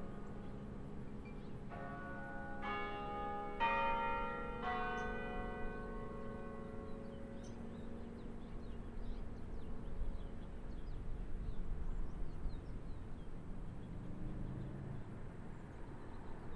Memorial Carillon and Campanile, Lawrence, Kansas, USA - Memorial Carillon and Campanile
Bells of the University of Kansas Memorial Carillon sounding 5 p.m. captured from the rooftop of the Mississippi Parking Garage. The Carillon and Campanile were constructed as a memorial to university students who died in World War II.